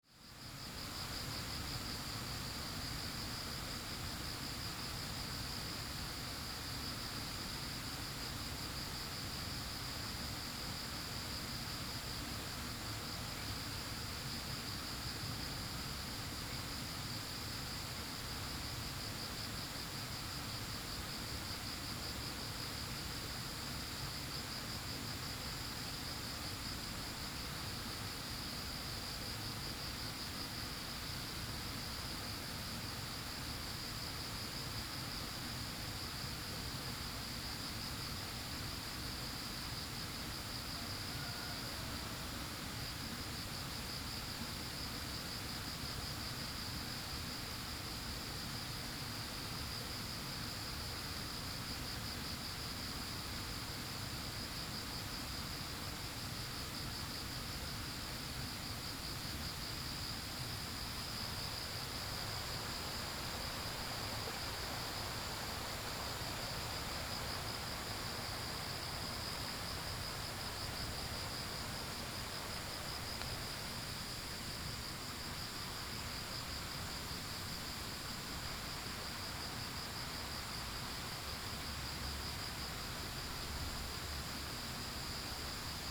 茅埔坑溪生態公園, 桃米巷, Nantou County - Early morning
Early morning, Bird calls, Insects called, The sound of water streams